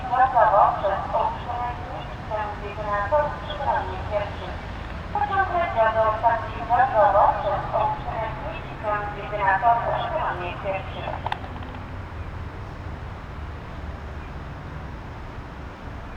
City rush. Two train announcements. Train arrival and departure. Snow is melting.